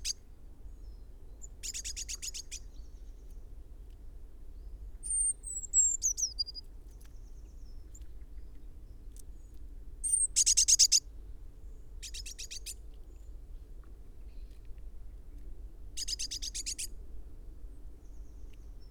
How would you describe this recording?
Blue tit foraging ...variety of calls in a variety of pitches ... bird calls from great tit ... blackbird ... wood pigeon ... lavalier mics in parabolic ... background noise ... including a bird scarer ...